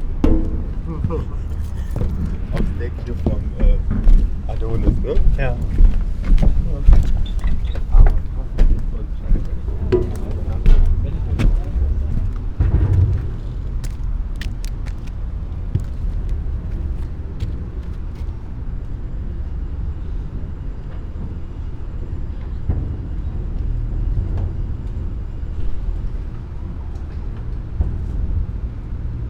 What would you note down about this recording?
river Spree, Sonopoetic paths Berlin